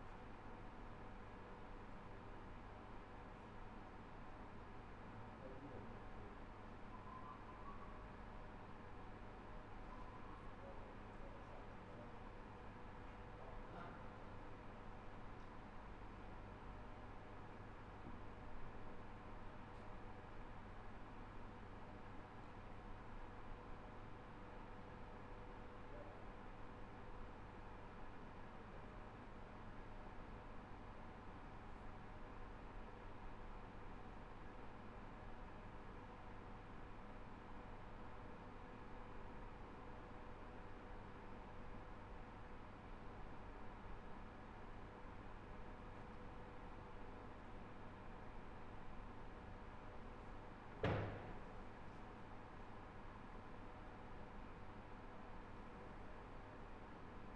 Favoriten, Wien, Austria - Campus 2
From 10 to 0
22 January 2017, ~11:00